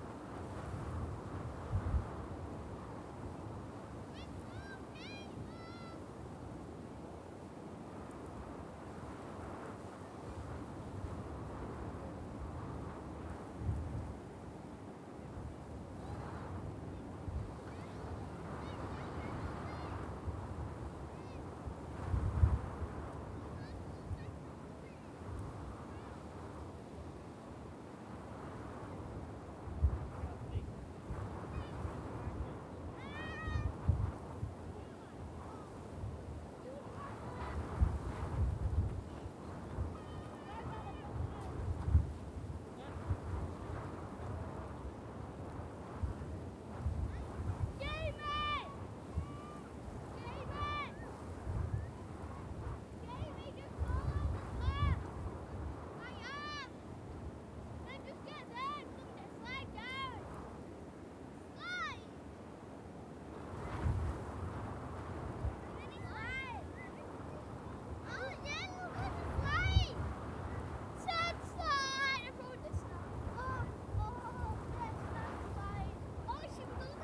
Unnamed Road, Prestatyn, UK - Gronant Sand Dunes
Morning meditation on top of sand dunes buffeted by the winds and joined, for a time, by some children playing in the dunes. Recorded on a Tascam DR-40 using the on-board microphones as a coincident pair (with windshield).